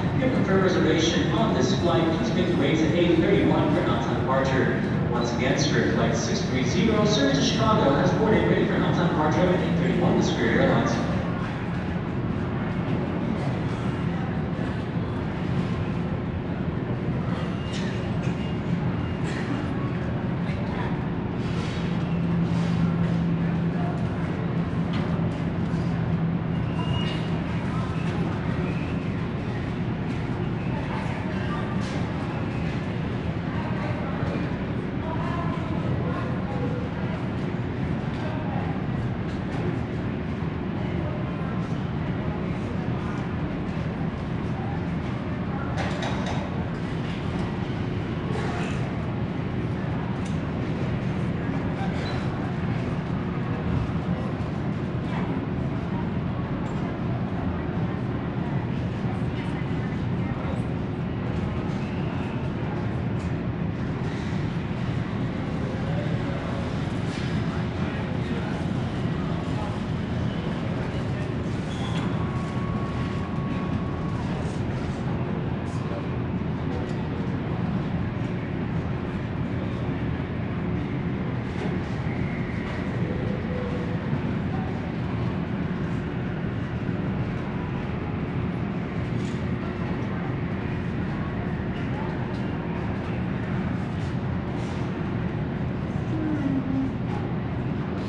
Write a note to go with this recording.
Recorded with a pair of DPA 4060s and a Marantz PMD661